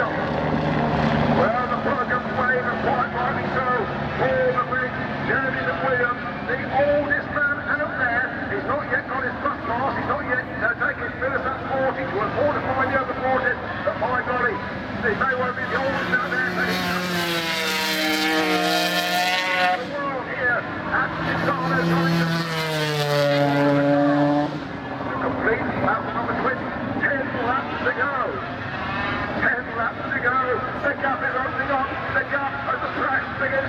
500cc motorcycle race ... part two ... Starkeys ... Donington Park ... the race and all associated background noise ... Sony ECM 959 one point stereo mic to Sony Minidisk ...